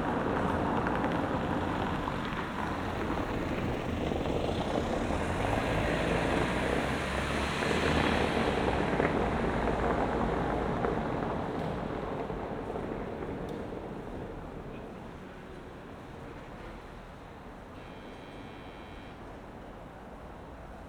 Berlin: Vermessungspunkt Friedel- / Pflügerstraße - Klangvermessung Kreuzkölln ::: 26.08.2012 ::: 02:05